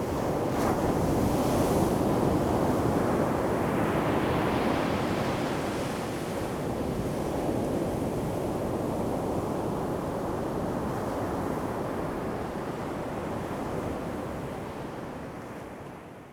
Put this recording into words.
In the beach, Sound of the waves, Very hot weather, Zoom H2n MS+ XY